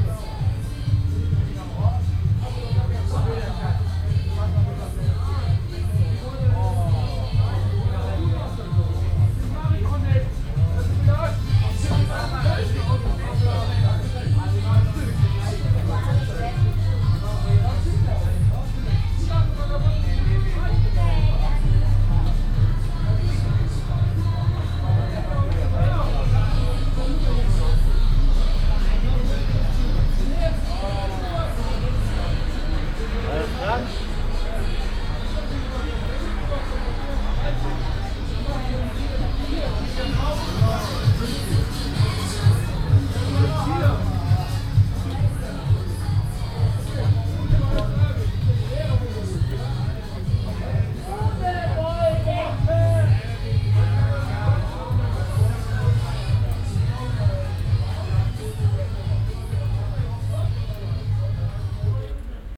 cologne, altstadt, hühnergasse
sonntags morgens um 10:00 Uhr - akustische hochstimmung in der von zwei gastronomien bestimmten engen kleinen gasse.
soundmap nrw: social ambiences, art places and topographic field recordings